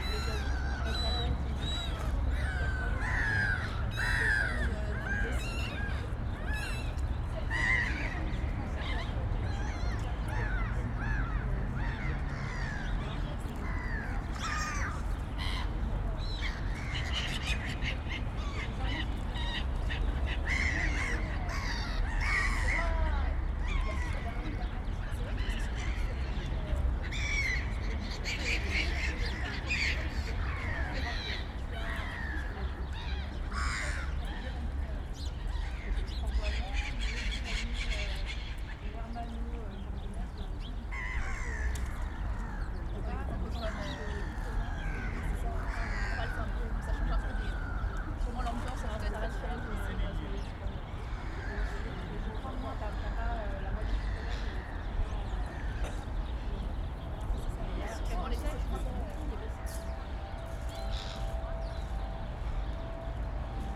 Michaelkirchplatz / Engelbecken, Berlin, Deutschland - Cafe Engelbecken
It is Saturday. We hear people and animals, mostly birds, embedded in the city, a busy urban environment in Corona times.
28 November 2020